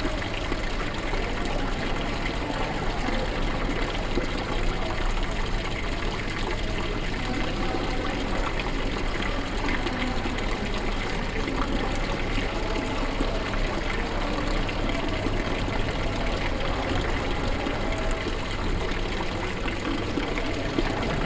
Norway, Oslo, Oslo Radhus, Hall, fountain, water, binaural
Oslo, Norway